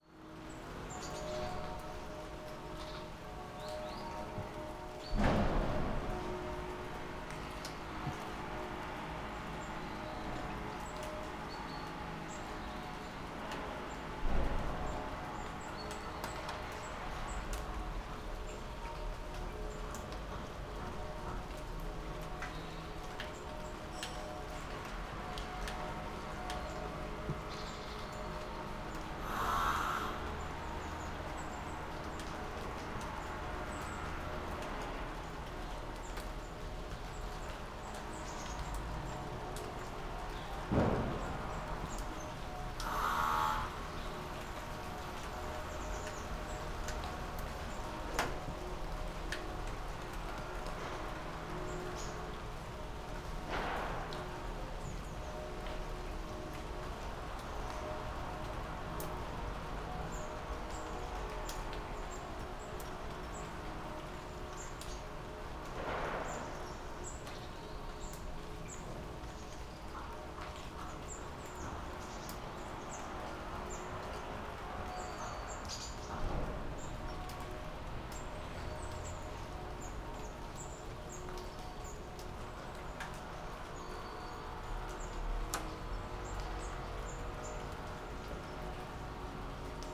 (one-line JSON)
{"title": "Berlin Bürknerstr., backyard window - autumn morning, workers, distant church bells, light rain", "date": "2014-11-19 10:00:00", "description": "an autumn morning, workers, distant church bells, light rain, nothing special happens\n(Sony PCM D50)", "latitude": "52.49", "longitude": "13.42", "altitude": "45", "timezone": "Europe/Berlin"}